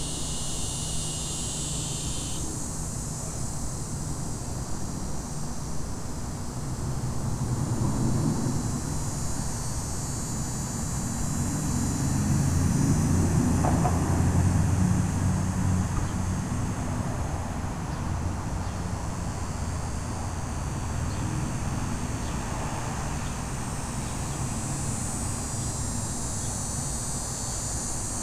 Electric Cicadas, Alexandria, Louisiana, USA - Electric Cicadas
Okay, not really electric but listen... Dogs barking, squirrel chatter, bugs, traffic, birds.
Tascam DR100 MK2
Alexandria, LA, USA, September 2012